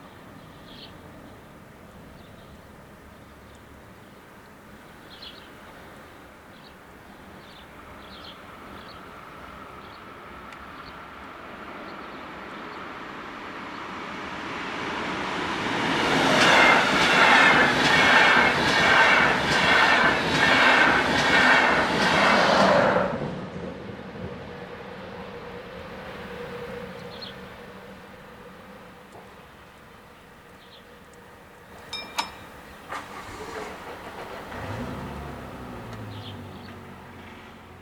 Stumsdorf railroad crossing - passing trains
Railroad, crossing, passing trains, Stumsdorf, shrinking village, post-industrial
Zörbig, Germany